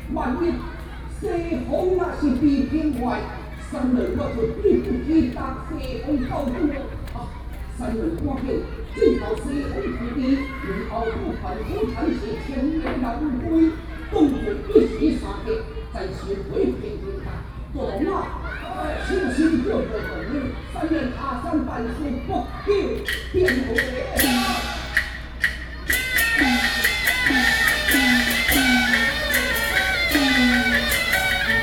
新興公園, Beitou District - Glove puppetry